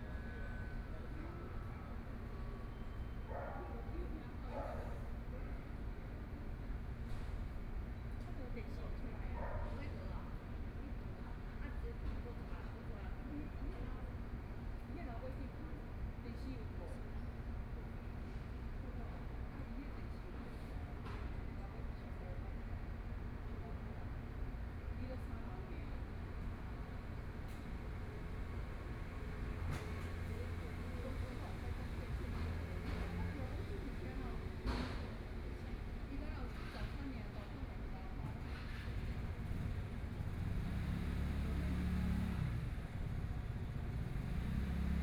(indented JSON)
{
  "title": "XinXing Park, Taipei City - Night park",
  "date": "2014-04-15 21:38:00",
  "description": "Night park, Dogs barking, Women chatting voices, Traffic Sound\nPlease turn up the volume a little. Binaural recordings, Sony PCM D100+ Soundman OKM II",
  "latitude": "25.06",
  "longitude": "121.52",
  "altitude": "12",
  "timezone": "Asia/Taipei"
}